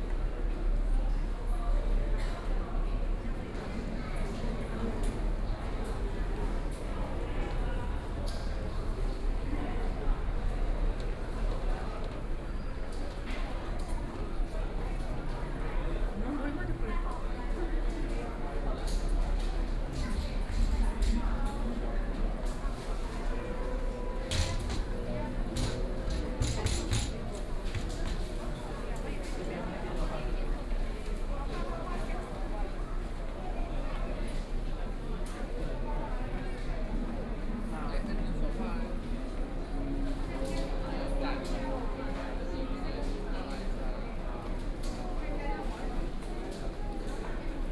Walking in the temple, Environmental sounds
Binaural recordings